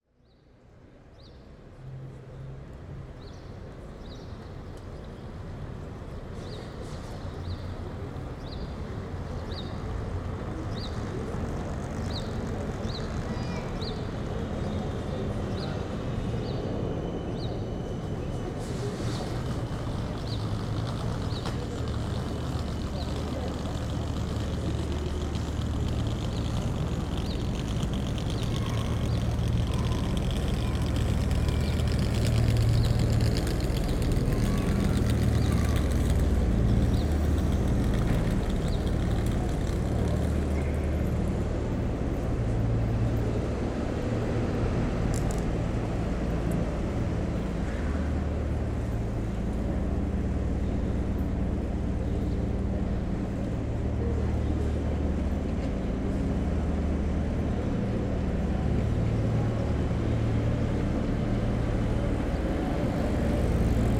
Willy-Brandt-Platz, Erfurt, Deutschland - Erfurt Main Station Forecourt 2
*Recording in AB Stereophony.
Evolving day`s activity: Scattered whispers, approaching trolley wheels on paved floor, people, speeding bus and tram engines and bike freewheeling, subtle birds, drones of cargo engine at close range.
The space is wide and feels wide. It is the main arrival and transit point in Thuringia`s capital city of Erfurt. Outdoor cafes can be found here.
Recording and monitoring gear: Zoom F4 Field Recorder, RODE M5 MP, Beyerdynamic DT 770 PRO/ DT 1990 PRO.
16 July 2020, 08:38